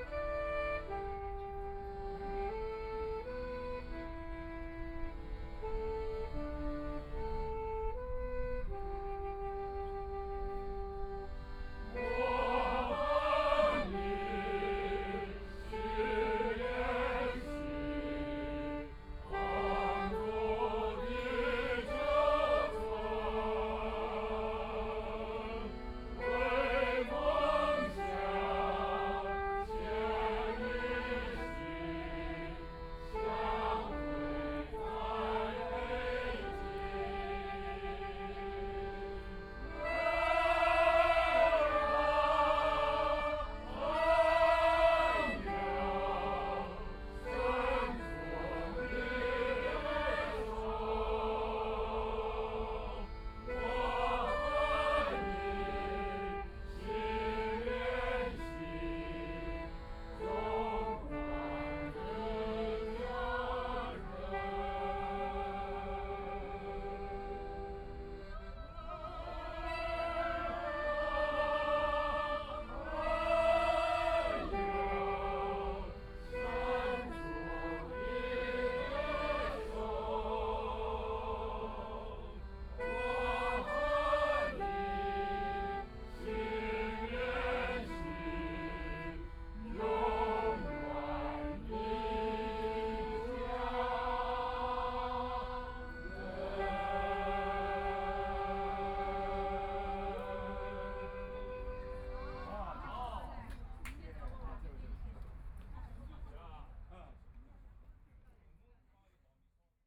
Huangxing Park, Shanghai - Practice singing
A group of elderly people are practicing singing chorus, Binaural recording, Zoom H6+ Soundman OKM II ( SoundMap20131122- 6 )